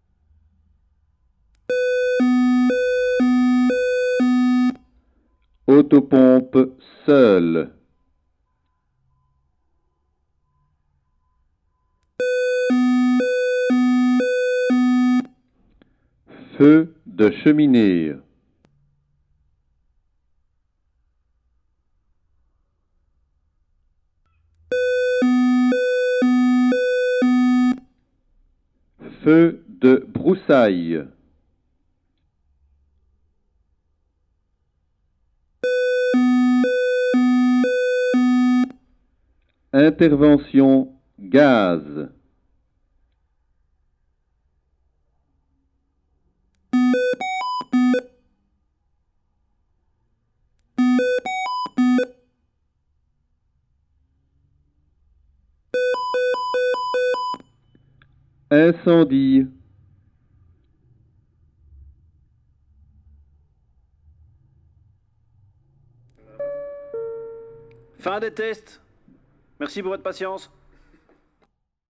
In a very few time, the fire brigade alarms will disappear. Firemen will use an automatic system, called 'be alert', comparable to a phone they will wear everyday. A fireman called me a few weeks ago in aim to record the alarm, before the disappearance. I was stunned. In fact I put my recorder to a very high compression level, because I was waiting for a very loud siren. And... it's only a small speaker saying : hey, wake up guys, wake up ! Nothing loud. The alarm is preceded by a code (like a small song), and a voice says in french consequence of the problem : chimney fire, extrication, etc. This is recorded in the fire brigade room, where firemen sleep. In a few time this song will be the past.